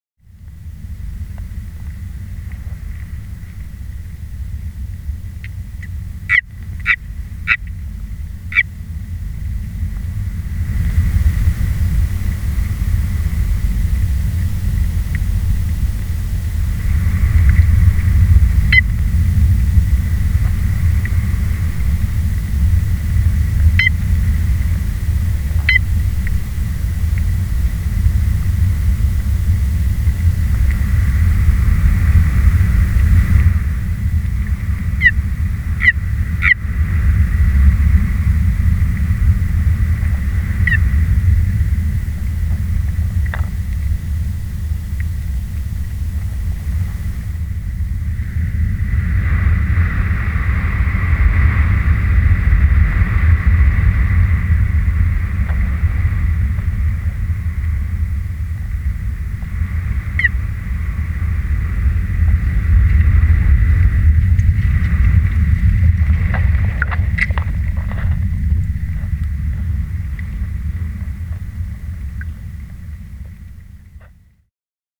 A storm designated "Freya" swept through the Midlands in 2019 battering the small pond where this frog lived on the edge of Barnards Green. Recorded with one piezo hydrophone and a MixPre 3.
West Midlands, England, United Kingdom, 7 March 2019